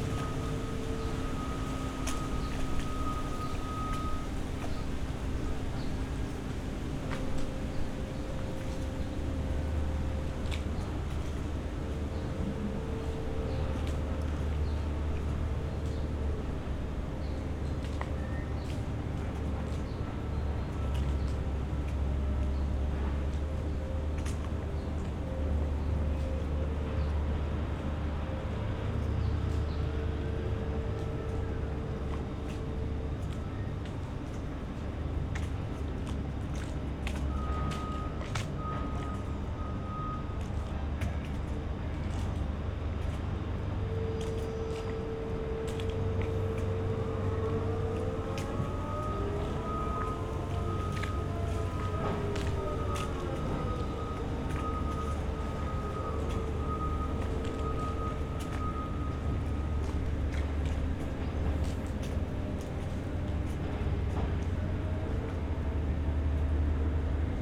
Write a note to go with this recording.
place revisited on a summer Sunday morning, cement factory at work, a boat is passing-by, river sounds, (SD702, Audio technica BP4025)